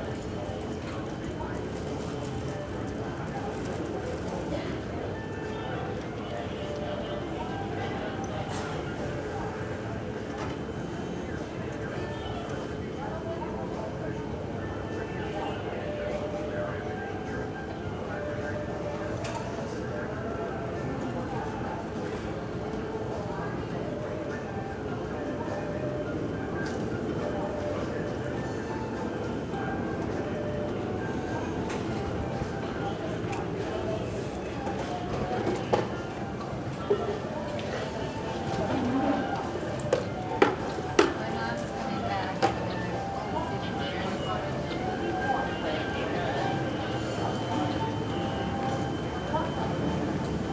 Chicago Midway International Airport (MDW), S Cicero Ave, Chicago, IL, USA - Music for Airports - Chicago Midway
waiting for a flight to Los Angeles, on a layover from Pittsburgh, after installing the Svalbard show at the Center for PostNatural History.